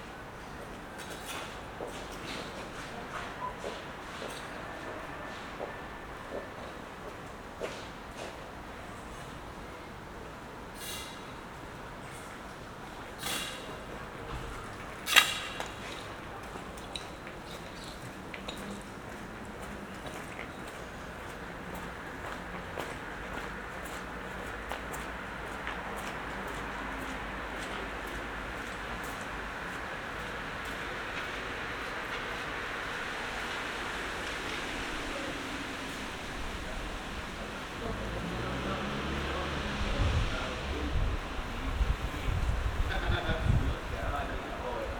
berlin: friedelstraße - the city, the country & me: night traffic
cyclists, passers by, taxis
the city, the country & me: october 6, 2012
Berlin, Germany, 6 October